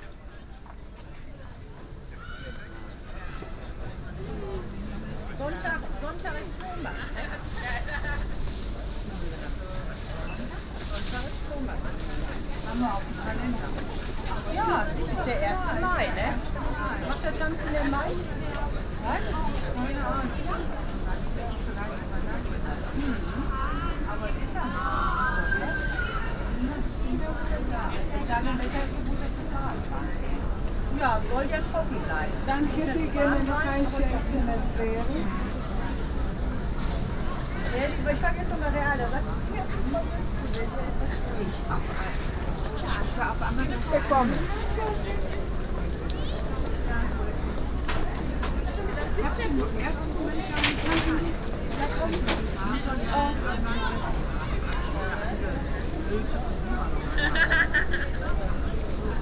essen, kettwigerstraße, market place
In der Fussgängerzone am Markt. Die Stimmen der Marktverkäufer und Kunden.
Inside the pedestrian city zone at the market. seller and customer talking in local tongue.
Projekt - Stadtklang//: Hörorte - topographic field recordings and social ambiencesrecordings and social ambiences